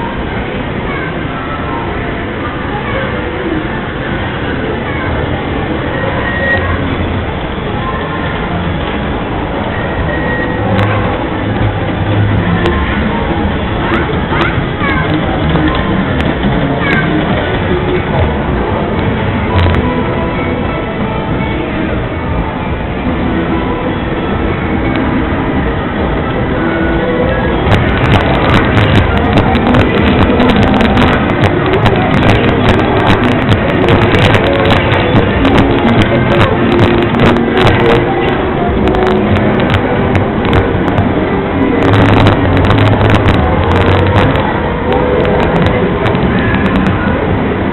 gamecenter 20.dez.2007 at 6 pm